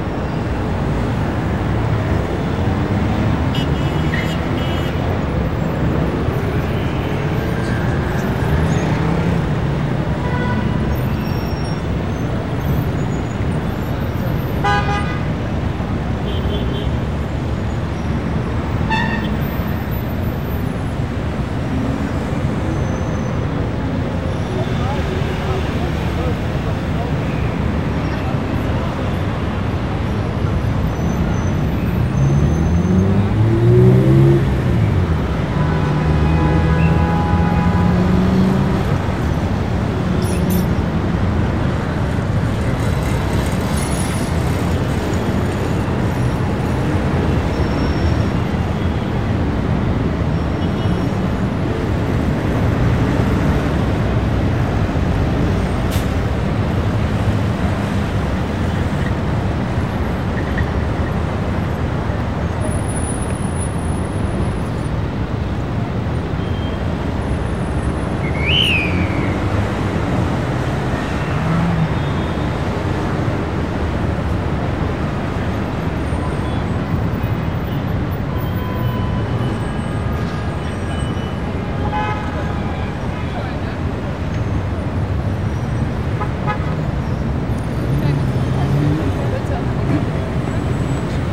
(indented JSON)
{
  "title": "paris, arc de triomphe, traffic",
  "date": "2009-12-12 13:27:00",
  "description": "afternoon traffic surrounding the monument with occassional whistles of a police man\ninternational cityscapes - topographic field recordings and social ambiences",
  "latitude": "48.87",
  "longitude": "2.30",
  "altitude": "70",
  "timezone": "Europe/Berlin"
}